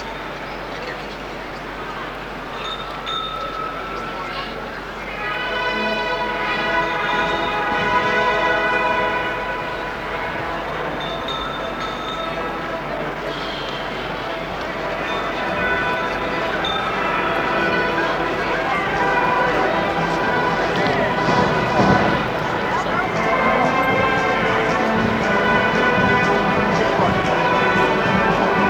Piazza del Campo, Piazza Il Campo, Siena SI - Anniversario della Liberazione, holiday - glasses - music - speech
we reach the Campo, a huge semi-circular piazza in the center of the city. We sit down at the edge, in the vicinity of one of the many sidewalk cafes. Glasses are just cleaned. The place fills up gradually. In the middle a platform is built. / wir erreichen den Campo, einen riesigen halbrunden Platz in der Mitte der Stadt, der nach vorne hin schrägt abfällt. Wir setzen uns an den Rand, in die Nähe von einen der vielen Straßencafes. Gläser werden gerade geputzt. Der Platz füllt sich nach und nach. Vorne ist eine Tribüne aufgebaut.
Sony Walkman WM-D6C recording, digitilized with zoom h2
Siena SI, Italy, 25 April 1992